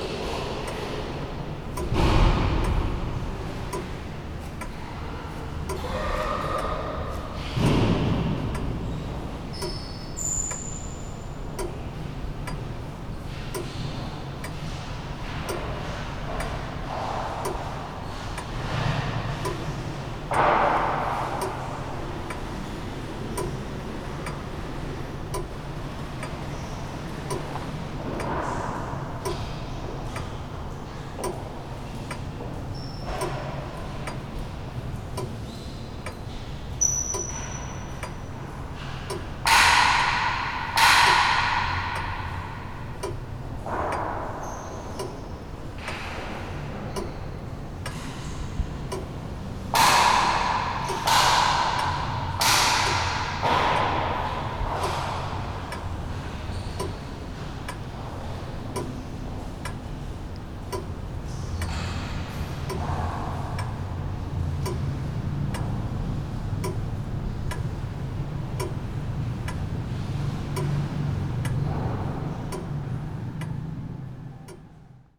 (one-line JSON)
{"title": "Heraklion, downtown, Agios Minas Cathedral - grandfathers clock", "date": "2012-09-28 12:16:00", "description": "a clock ticking away in the cathedral's main hall.", "latitude": "35.34", "longitude": "25.13", "altitude": "30", "timezone": "Europe/Athens"}